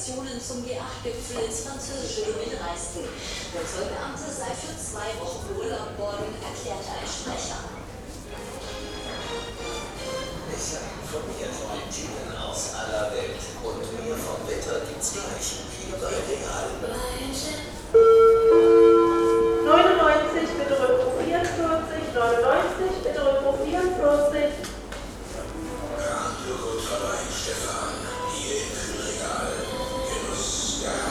berlin, am treptower park: kaufhaus - the city, the country & me: department store
short soundwalk through a department store: department store news, sound of refrigerators, visitors, advertising announcements, department store wheather report
the city, the country & me: june 4, 2011
4 June, 16:00